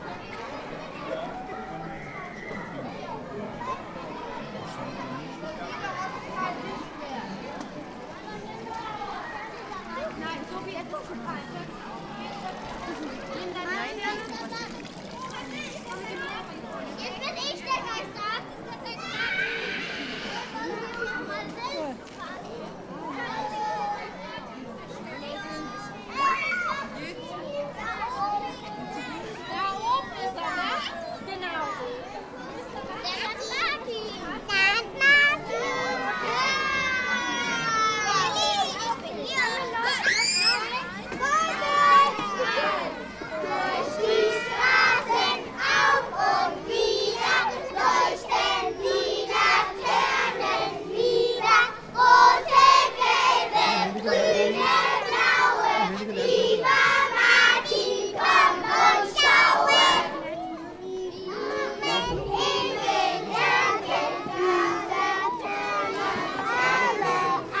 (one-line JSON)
{"title": "Bad Orb, Hauptstrasse, St. Martins-Umzug - Laternelaufen 2018", "date": "2018-11-08 17:32:00", "description": "A kindergarden in Bad Orb walks with the children, the parents and the organisers through Bad Orb with lanterns, singing traditional songs about St. Martin, a common practice in Germany, in catholic towns like Orb they sing songs about the saint st. martin. Recorded with the H2 by Zoom.", "latitude": "50.23", "longitude": "9.35", "altitude": "176", "timezone": "Europe/Berlin"}